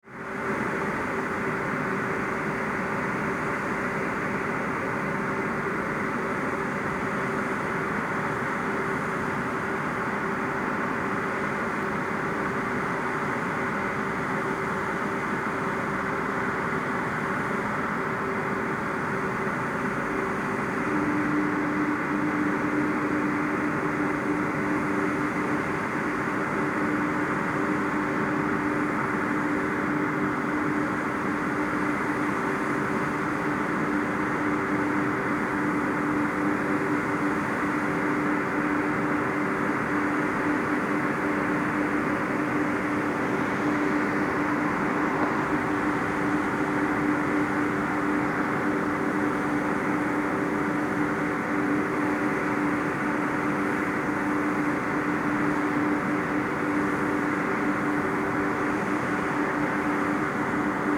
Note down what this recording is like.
Long trains carry the brown coal along special tracks to the power stations where it is burnt. This one is waiting for the signal.